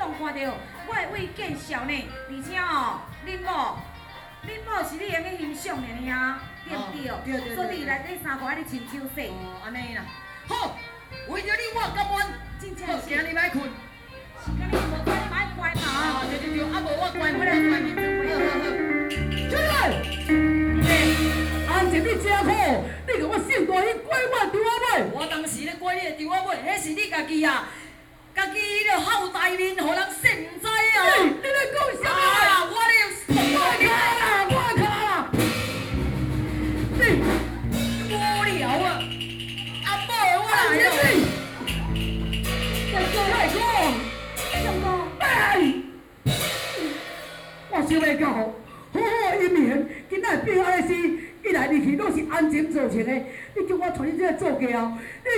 Beitou, Taipei - Taiwanese Opera
Taiwanese Opera, Zoom H4n + Soundman OKM II